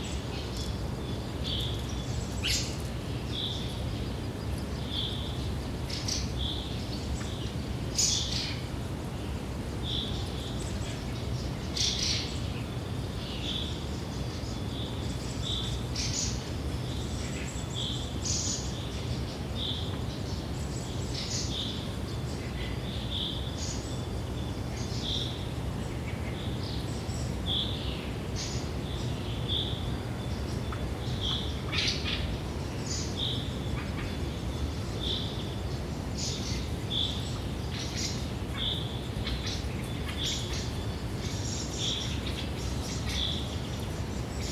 Королёв, Московская обл., Россия - Birds life
Active birds' life in that fine day. Birds are screaming, talking, flying and walking around. Many voices of various birds.
Recorded with Zoom H2n 2ch surround mode
Московская область, Центральный федеральный округ, Россия